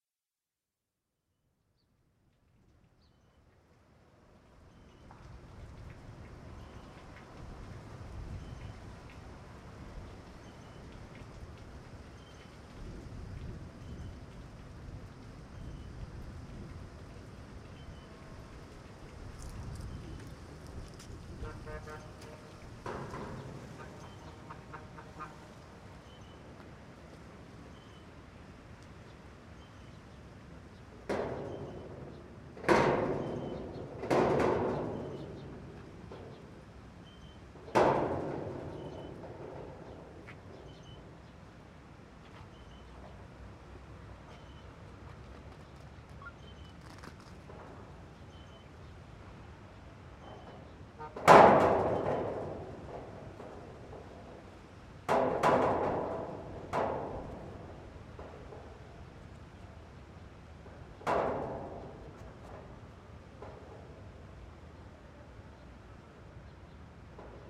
Al-Qahira, Ägypten - metal & wind
recorded during a soundArtWorkShop held by ludger hennig + rober rehnig @ GUC activityWeek 2012 with:
nissmah roshdy, amira el badry, amina shafik, sarah fouda, yomna farid, farah.saleh, alshiemaa rafik, yasmina reda, nermin mohab, nour abd elhameed
recording was made with:
2 x neumann km 184 (AB), sounddevice 722